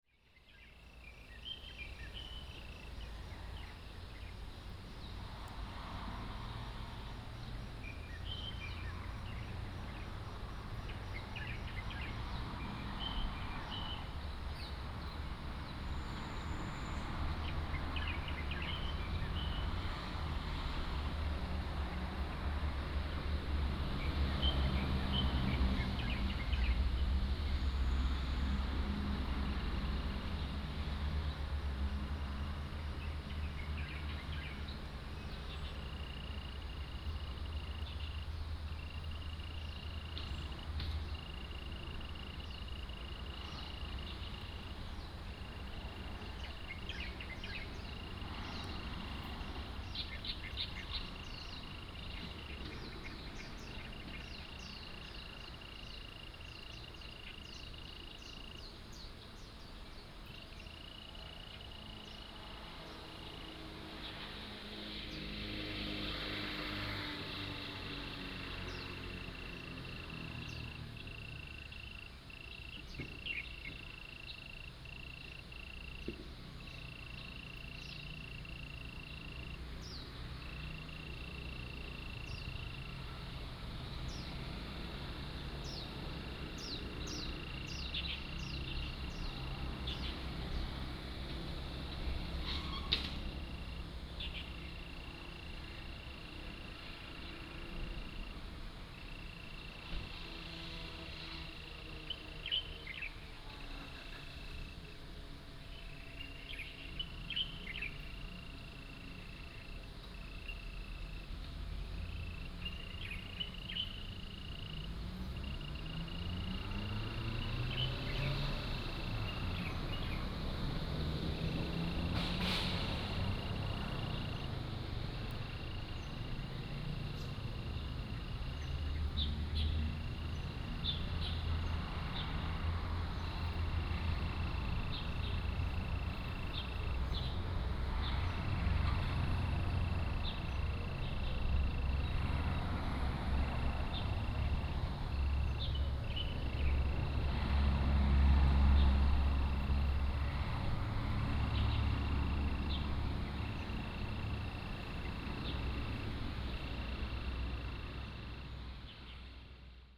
Under the tree, Bird calls, Insect sounds
Puli Township, 桃米巷48號